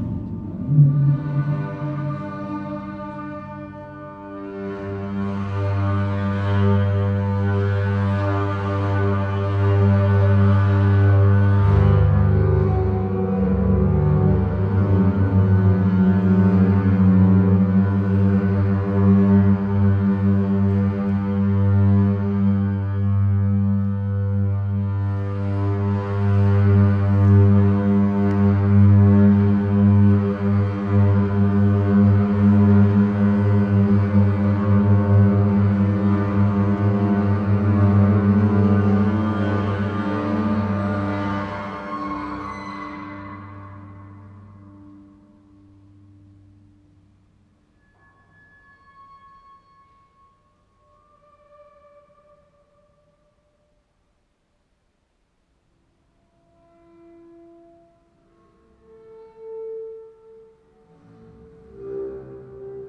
improvisation in a bunker in Latvia - Michele and Patrick improvise at bunker in Latvia
Michele Spanghero and Patrick McGinley play a double bass in an abandoned nuclear missile bunker in eastern Latvia.